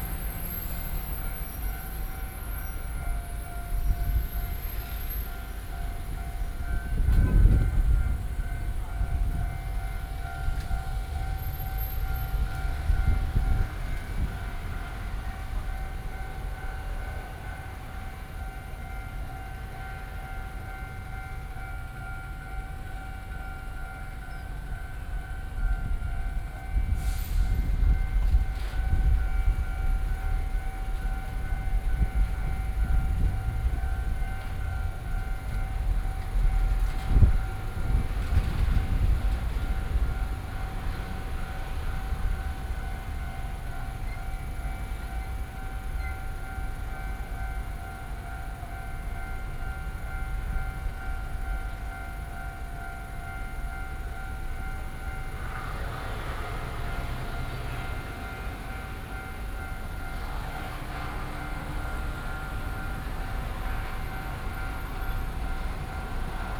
Jung Li City, Taoyuan - Train traveling through
Level crossing, Train traveling through, Sony PCM D50 + Soundman OKM II
桃園縣, 中華民國, 11 June